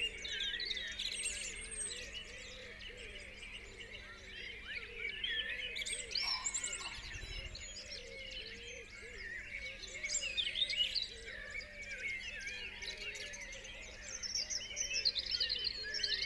Unnamed Road, Malton, UK - singing in a bush ... mostly wren ...
singing in a bush ... mostly wren ... song and calls from ... wren ... blue tit ... great tit ... blackbird ... robin ... pheasant ... wood pigeon ... collared dove ... crow ... tree sparrow ... lavalier mics clipped to twigs ... background noise ... traffic ... etc ...
30 March 2019